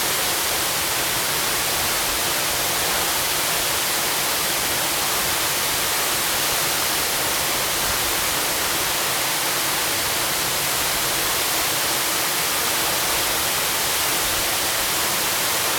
五峰旗瀑布, Jiaoxi Township, Yilan County - Waterfalls
Waterfalls
Zoom H2n MS+ XY
Yilan County, Taiwan, 7 December